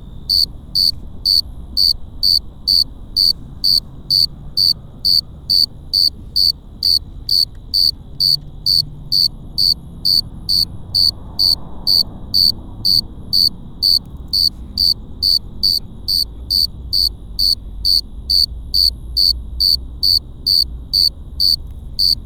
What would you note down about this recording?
Close to some crickets at Cerro Gordo in Leon, Guanajuato. Mexico. I made this recording on April 18th, 2019, at 9:19 p.m. I used a Tascam DR-05X with its built-in microphones and a Tascam WS-11 windshield. Original Recording: Type: Stereo, Cerca de algunos grillos en el Cerro Gordo en León, Guanajuato. México. Esta grabación la hice el 18 de abril 2019 a las 21:19 horas.